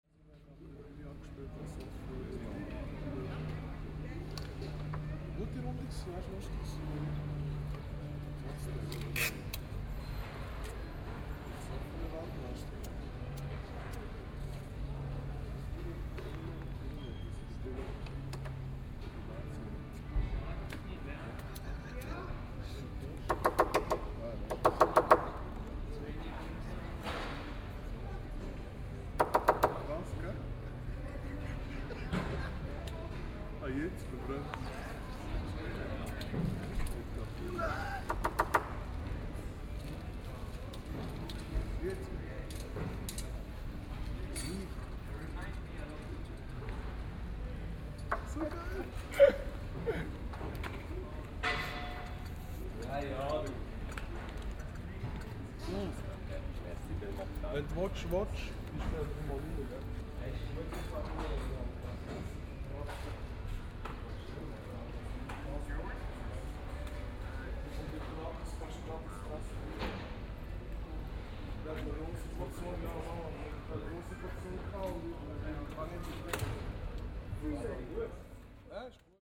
On the square in front of the church the organ is still audible, but the people, already preparing for the party at the evening do not care.
Aarau, Switzerland